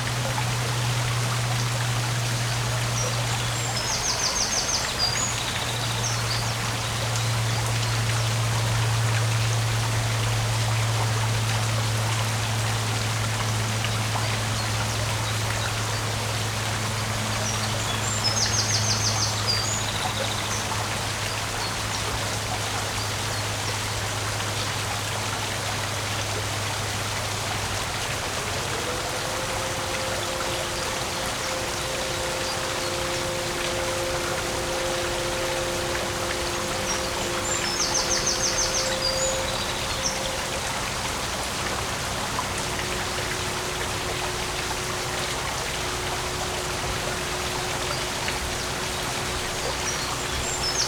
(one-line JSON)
{"title": "Walking Holme Holme Mill", "date": "2011-04-20 10:24:00", "description": "The river as it passes the mill.", "latitude": "53.56", "longitude": "-1.80", "altitude": "167", "timezone": "Europe/London"}